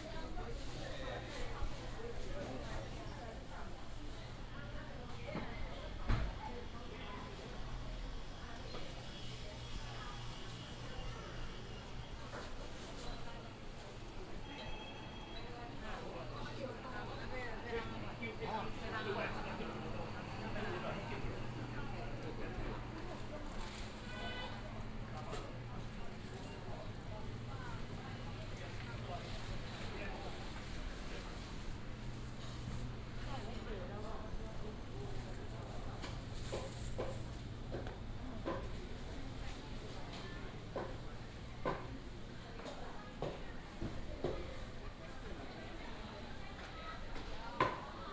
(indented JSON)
{
  "title": "Ln., Sec., Jiahou Rd., Houli Dist. - Small traditional markets",
  "date": "2017-01-22 09:57:00",
  "description": "Small traditional markets",
  "latitude": "24.31",
  "longitude": "120.72",
  "altitude": "236",
  "timezone": "GMT+1"
}